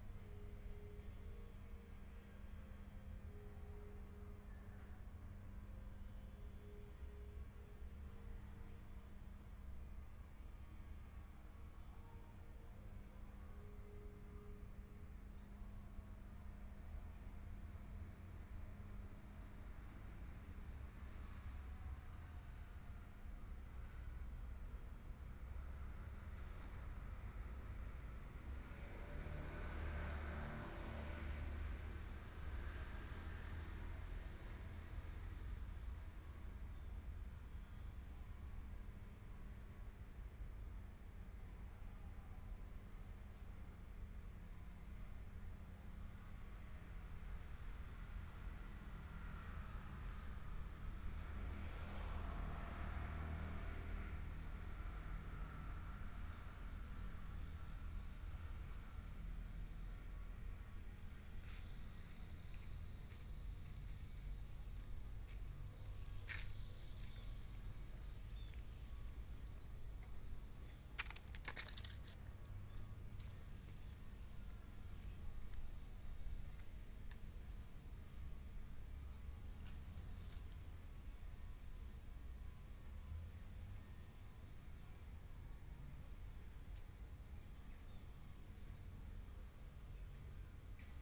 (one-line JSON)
{"title": "花崗山綜合田徑場, Hualien City - Afternoon", "date": "2014-02-24 14:25:00", "description": "Construction Sound, Birds sound, Traffic Sound, Environmental sounds\nPlease turn up the volume\nBinaural recordings, Zoom H4n+ Soundman OKM II", "latitude": "23.98", "longitude": "121.61", "timezone": "Asia/Taipei"}